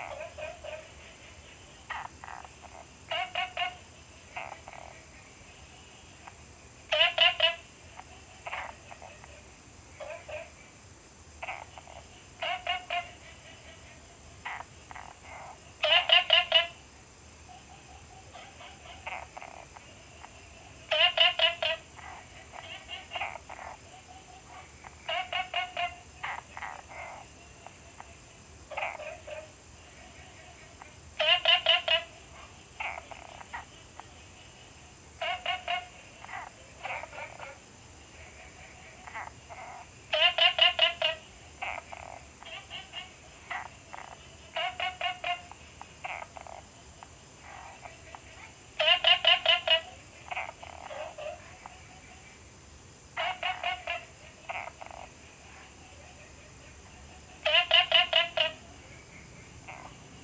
青蛙ㄚ 婆的家, Puli Township - Frog chirping
Frog calls, Small ecological pool
Zoom H2n MS+XY
Puli Township, 桃米巷11-3號, 3 September 2015